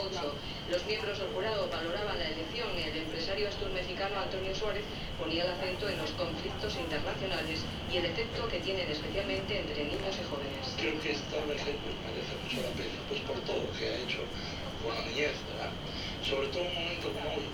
Almáciga, Santa Cruz de Tenerife, Hiszpania - convenience store
standing in a front of an old, cluttered convenience store with some basic goods. radio playing. Old lady coming out form the store surprised and uneasy seeing a man pointing a recorder in her direction. asking some questions. car departing. (sony d50)
Santa Cruz de Tenerife, Spain, 6 September 2016, ~15:00